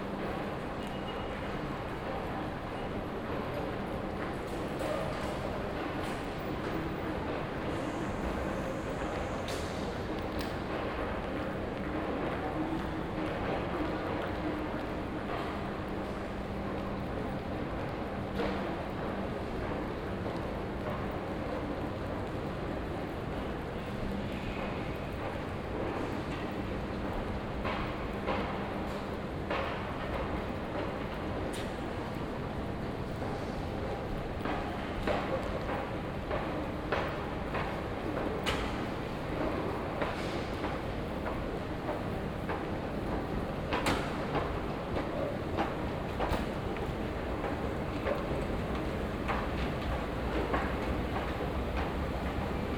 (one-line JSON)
{"title": "lisbon, metro station baixa / chiado - soundwalk", "date": "2010-07-03 10:30:00", "description": "sound walk in metro station baixa / chiado, from ground to street level. binaural, use headphones", "latitude": "38.71", "longitude": "-9.14", "altitude": "53", "timezone": "Europe/Lisbon"}